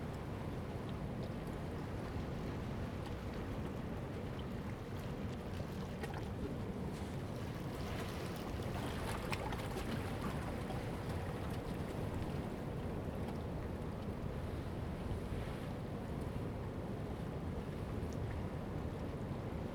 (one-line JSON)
{"title": "Jizazalay, Ponso no Tao - Waves and tides", "date": "2014-10-29 10:21:00", "description": "Waves and tides\nZoom H2n MS +XY", "latitude": "22.08", "longitude": "121.52", "altitude": "9", "timezone": "Asia/Taipei"}